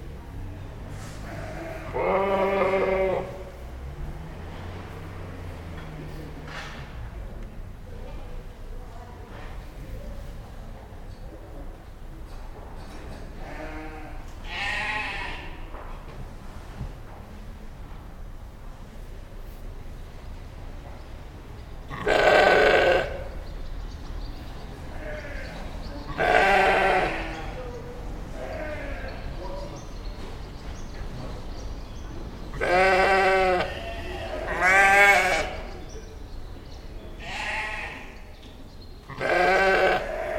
Woolfest, Mitchell's Auction House, Cockermouth, Cumbria, UK - Sheep baaing at the start of the second day of Woolfest
This is the sound of the sheep in their pens at the start of day two of Woolfest. Woolfest is an amazing annual festival of sheep and wool where knitters can buy all manner of amazing woolly produce but also meet the shepherds and animals from whom these goods ultimately come. The festival is held in an enormous livestock auction centre, and the first and last comrades to arrive are the sheep, alpacas, and other friends with spinnable fleece or fibre. Their shepherds and handlers often camp on site and before the knitters arrive in their droves at 10am the pens are swept clean and the animals are checked over and fed. One of the shepherds explained that the sheep baa lots at this point in the day because each time a person walks by their pens they think they might be bringing food. You can also hear the swifts that roost in the roof of the auction mart, the rusty gates of the pens, the chatter of stall holders and somebody sweeping.